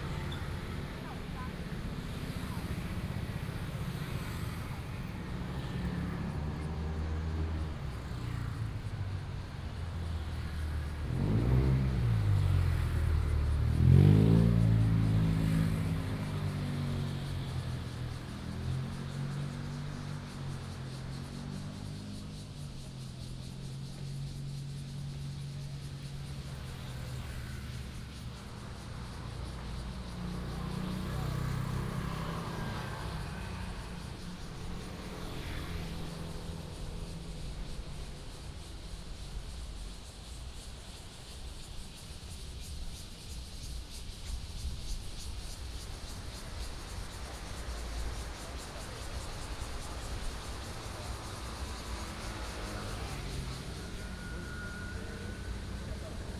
Zhongshan N. Rd., Tamsui District - Walking on the road
Traditional temple festivals, Fireworks sound, Traffic Sound, Cicada sounds
21 June 2015, 18:09